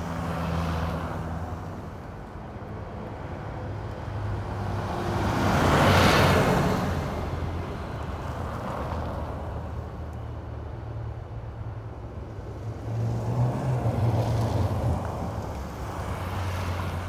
Rijeka, Croatia - Snow on road
Snow on road 2009
December 2009